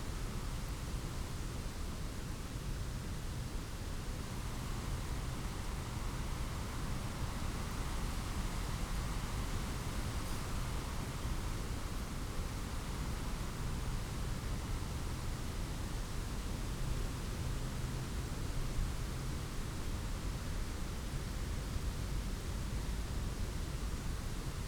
Unnamed Road, Malton, UK - if you go down to the woods ...
If you go down to the woods ... on a BTO one point tawny owl survey ... lavalier mics clipped to sandwich box ... wind through trees ... occasional passing vehicles ... pheasant calls early on ... not much else ...